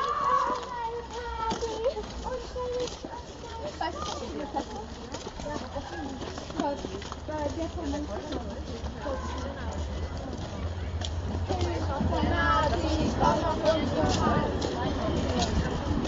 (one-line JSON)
{"title": "Kids not listening to their tourguide", "description": "If there is 15 cm of snow on the ground, a snow ball battle is certainly more interesting than a guided tour through Berlin... it is so cold here! but its beautiful...", "latitude": "52.52", "longitude": "13.40", "altitude": "40", "timezone": "Europe/Berlin"}